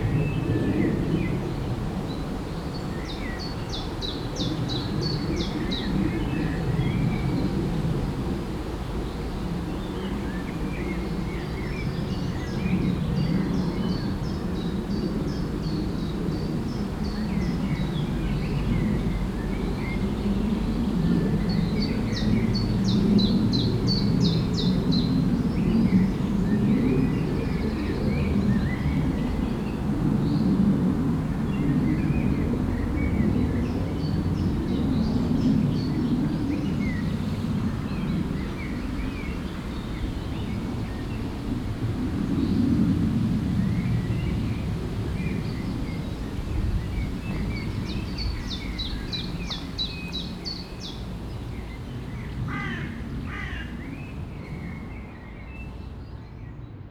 Im Landschaftsschutzgebiet Rumbach. Die Klänge der Vögel und ein Flugzeug quert den Himmel.
In the nature protection zone Rumbachtal. The sounds of birds and a plane crossing the sky.
Projekt - Stadtklang//: Hörorte - topographic field recordings and social ambiences